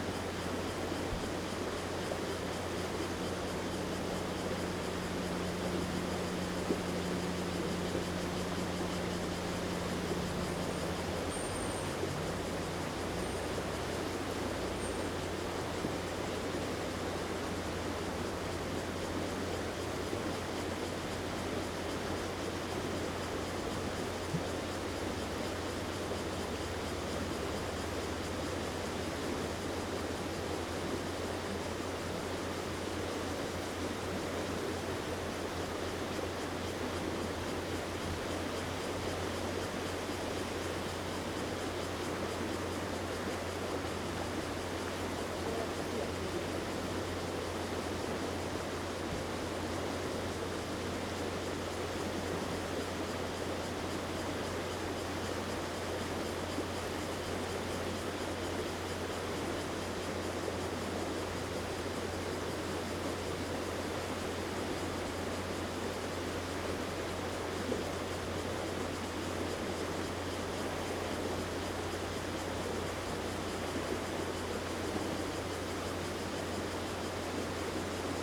新福里, Guanshan Township - Cicadas and Stream
Cicadas sound, Traffic Sound, Stream, Very hot weather
Zoom H2n MS+ XY